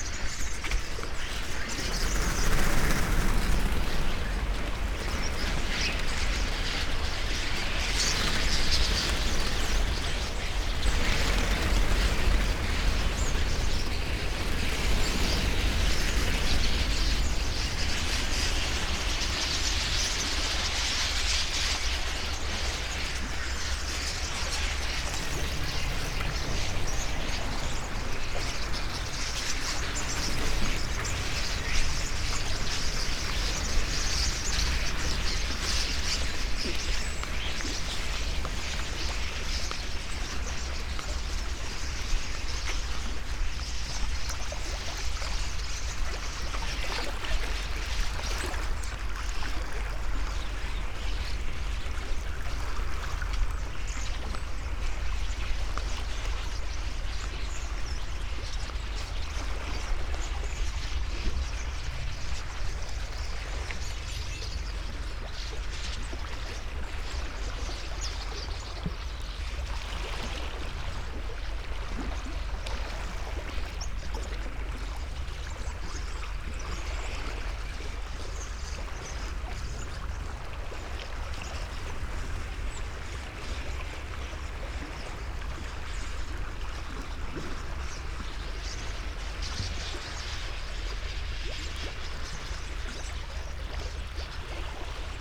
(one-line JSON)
{"title": "Novigrad, Croatia - meanwhile small town by the sea is still breathing air of dreams", "date": "2013-07-18 04:54:00", "description": "ride and walk at dawn, streets sonic scape with seagulls and air conditioners, pine trees and sea side sounds at the time, when light is purple blue, thousands of birds overnighting on old pine trees", "latitude": "45.32", "longitude": "13.56", "altitude": "5", "timezone": "Europe/Zagreb"}